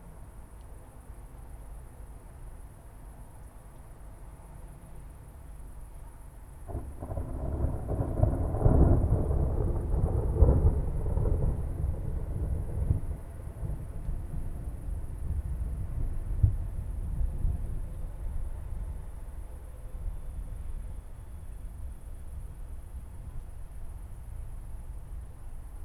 Poznan, Mateckiego, balcony - distant rumble
summer evening. thunderstorm rumbling far in the distance. no lightning. just distant murmur. moderate cricket activity. (roland r-07)
wielkopolskie, Polska